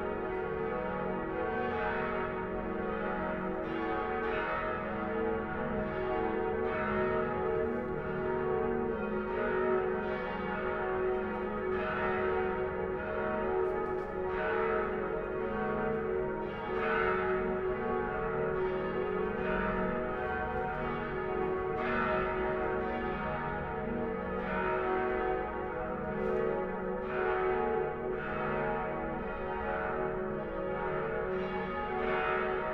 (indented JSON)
{
  "title": "Rue Cardinale, Aix-en-Provence, Frankreich - Hotel Cardinal, backyard, quiet morning and churchbells",
  "date": "2021-10-17 09:40:00",
  "description": "Soundscape of the hotels backyard, recorded on the windowsill of the bathroom. Sounds of birds, something that sounds like a vacuum cleaner, water running down a drain, a car, finally the bells of the nearby church. Binaural recording. Artificial head microphone set up on the windowsill of the bathroom. Microphone facing north. Recorded with a Sound Devices 702 field recorder and a modified Crown - SASS setup incorporating two Sennheiser mkh 20 microphones.",
  "latitude": "43.53",
  "longitude": "5.45",
  "altitude": "197",
  "timezone": "Europe/Paris"
}